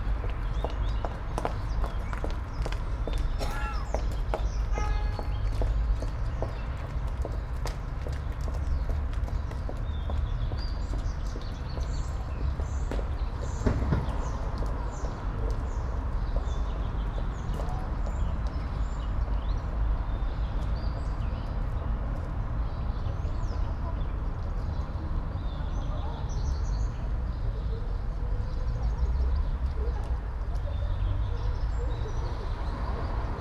all the mornings of the ... - apr 16 2013 tue
Maribor, Slovenia